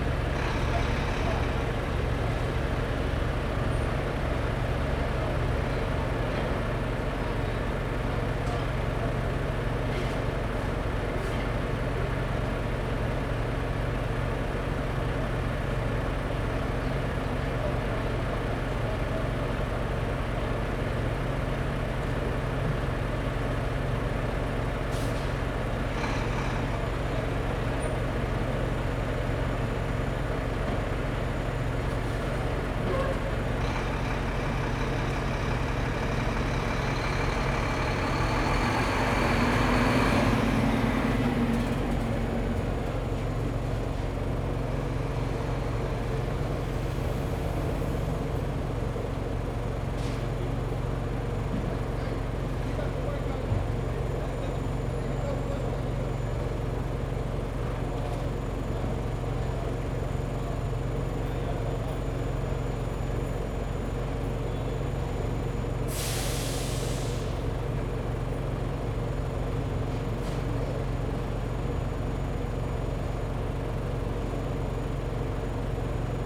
Lower East Side, New York, NY, USA - Early morning Stanton St
Early morning activity, 5am, Stanton St NYC.
13 April, 05:00